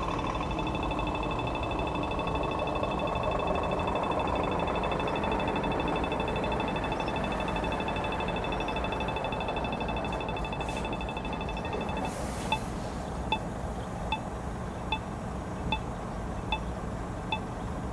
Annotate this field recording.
One of the most noticeable sound of Brussels are the traffic light bleeps, which play fast when you can cross and slow when you cannot. There loudness responds to noise. A loud sound increases the volume, which reduces again when it is quieter.